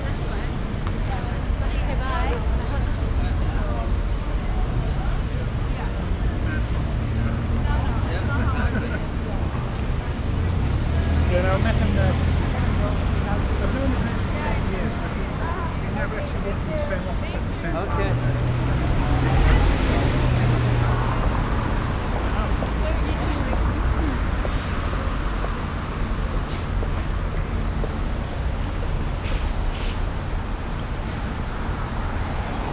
traffic in the morning time at a busy crossing downtown
soundmap international
social ambiences/ listen to the people - in & outdoor nearfield recordings
granville street corner robson